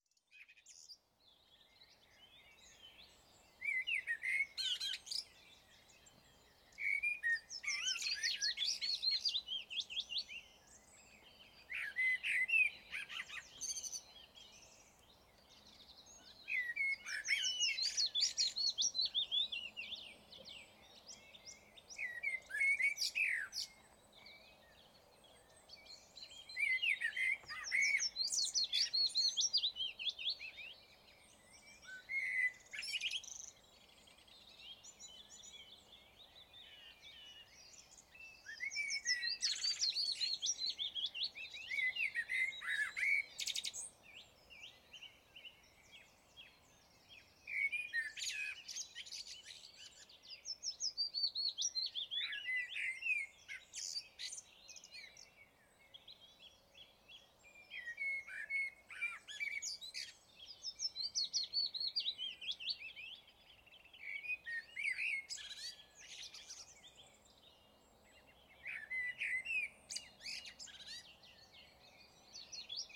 I spent the night in my van in this lay-by whilst out for a 3 day recording trip. I was woken by the birds around 4am so put my mic outside on a stand and lay back whilst the birds sang. Pearl MS-8 on a stand. Sound Devices MixPre 10T